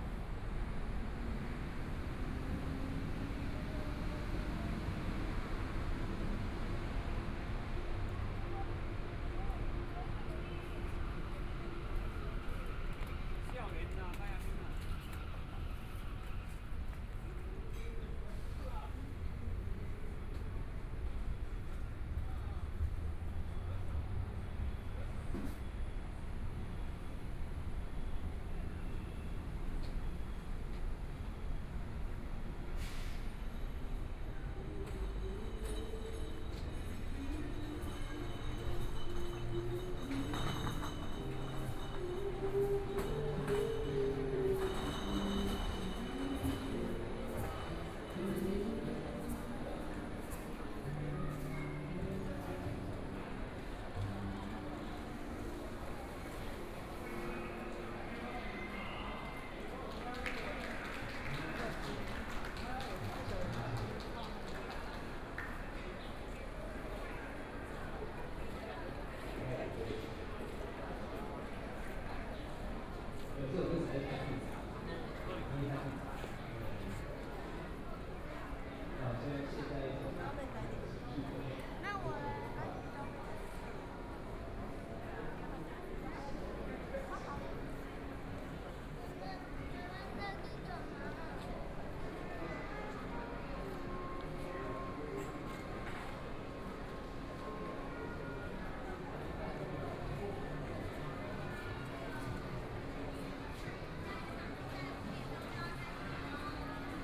Taipei EXPO Park, Taipei - Walking through the bazaar
Walking through the bazaar, Various shops voices, Binaural recordings, Zoom H4n+ Soundman OKM II
Taipei City, Taiwan, 8 February 2014, 15:43